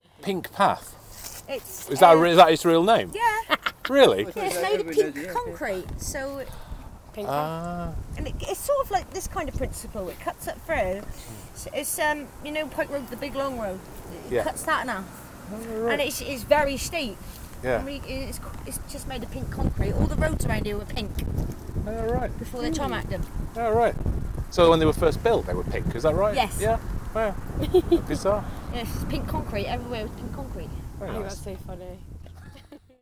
{"title": "Walk Three: The pink path", "date": "2010-10-04 16:06:00", "latitude": "50.39", "longitude": "-4.10", "altitude": "69", "timezone": "Europe/London"}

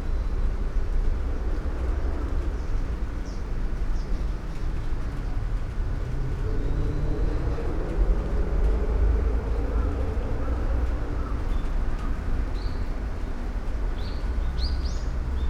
{"title": "teahouse, Shoseien, Kyoto - soft rain", "date": "2014-10-31 16:31:00", "latitude": "34.99", "longitude": "135.76", "altitude": "33", "timezone": "Asia/Tokyo"}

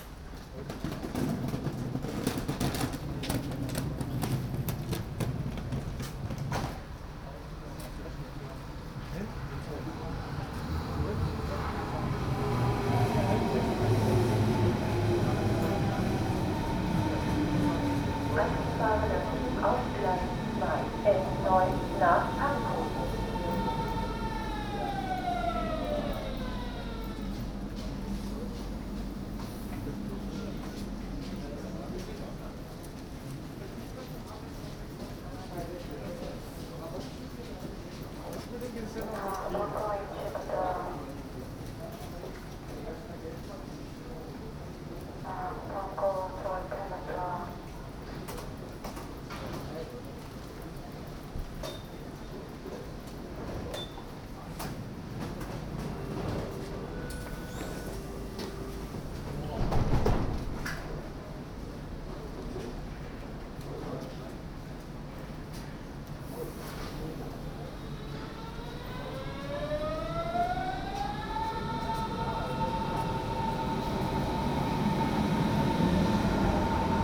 {"title": "S+U Frankfurter Allee, Möllendorffstraße, Berlin, Deutschland - Frankfurter AlleeS-Bahn Station", "date": "2012-06-20 16:15:00", "description": "For my multi-channel work \"Ringspiel\", a sound piece about the Ringbahn in Berlin in 2012, I recorded all Ringbahn stations with a Soundfield Mic. What you hear is the station Frankfurter Allee on an afternoon in June 2012.", "latitude": "52.52", "longitude": "13.47", "altitude": "43", "timezone": "Europe/Berlin"}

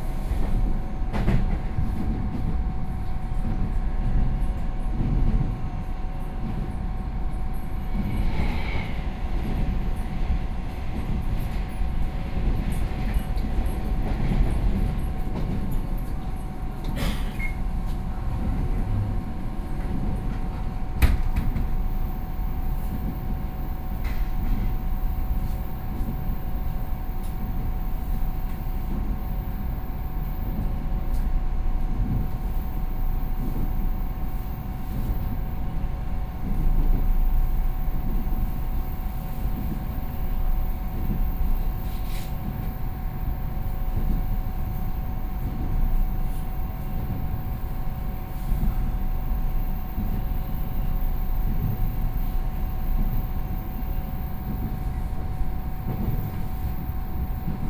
{"title": "Hukou, Hsinchu - On the train", "date": "2013-02-08 18:31:00", "latitude": "24.93", "longitude": "121.06", "altitude": "97", "timezone": "Asia/Taipei"}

